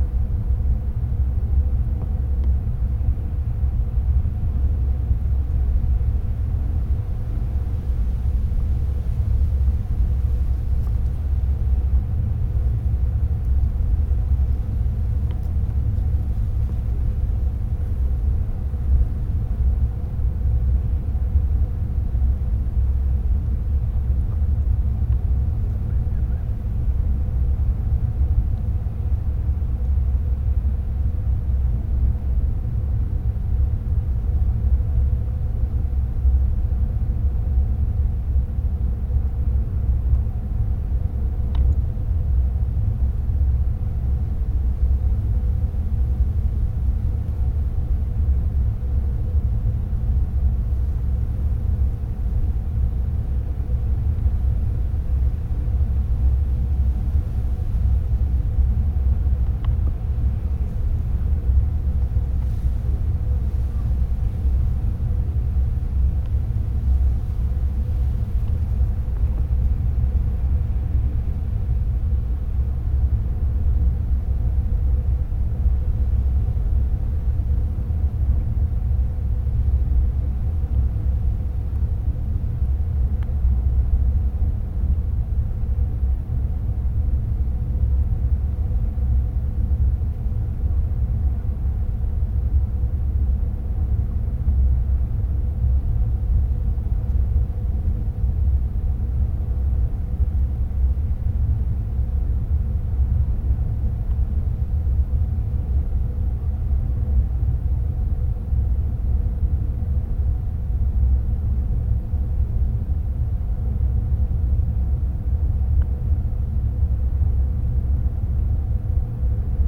Unnamed Road, Formazza VB, Italy - Hydroelectric Plant Air Conduit Drone
Drone coming from a man-made structure along the steep slope of the mountain, to help ventilation in underground conduits. Recorder sitting in thick grass, the rustling of wind in the grass can be heard, along with some voices coming from the trail below. Recorded with an Olympus LS-14